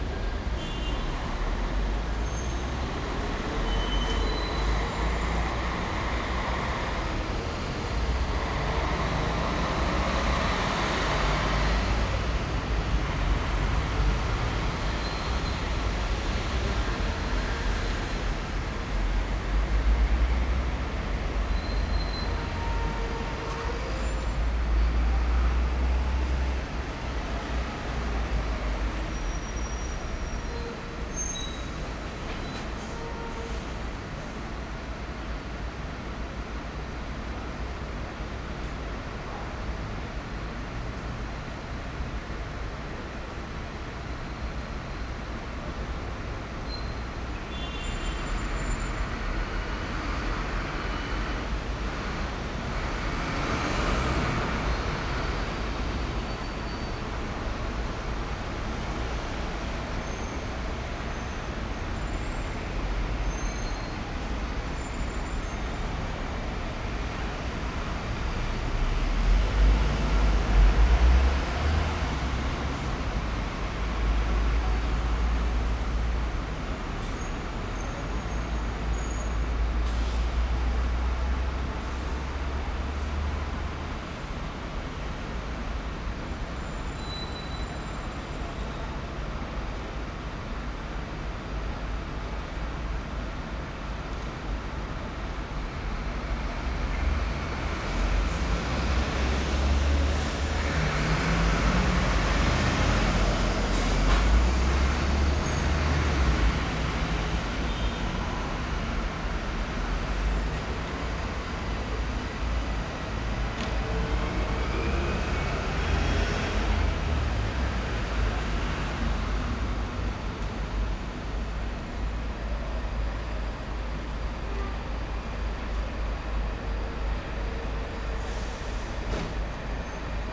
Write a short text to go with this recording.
Central London, Shaftsbury Avenue traffic on an August afternoon